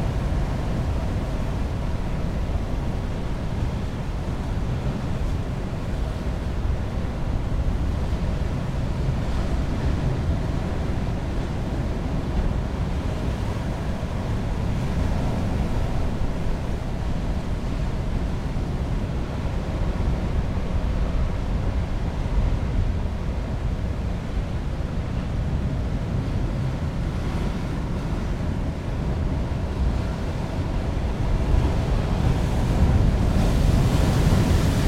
Chemin du Phare, Perros-Guirec, France - Heavy waves and Sea - distant rumble [Ploumanach]
à l'abri du vent entre 2 rochers. Le grondement des vagues au loin.
sheltered from the wind between 2 rocks. The roar of the waves in the distance.
April 2019.